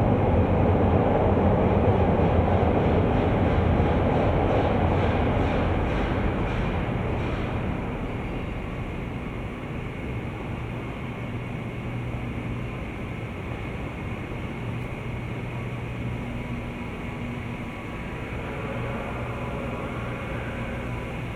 The Loop, Chicago, IL, USA - washington subway
this is a daily walk to the blue line recorded on a binaural mic. that being said it's best listened to with headphones.
28 February 2012